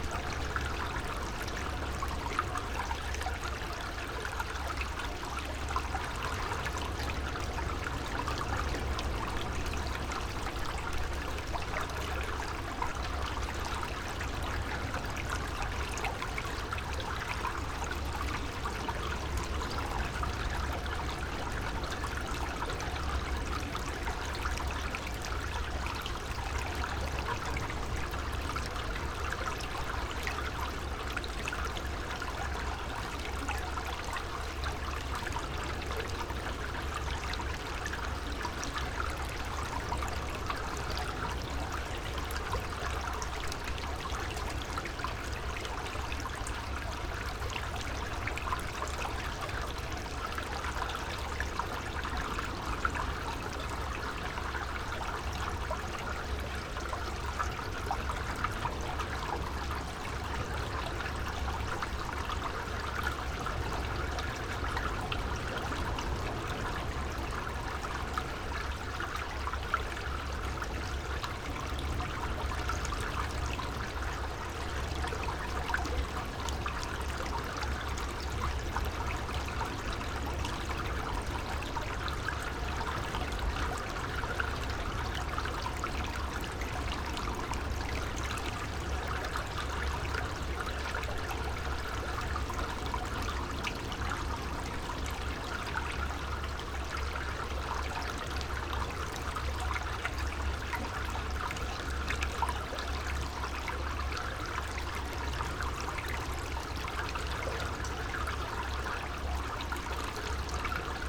Cleveland Way, Whitby, UK - water flowing from a culvert ...
water flowing from a culvert ... SASS ... background noise ... dog walkers etc ...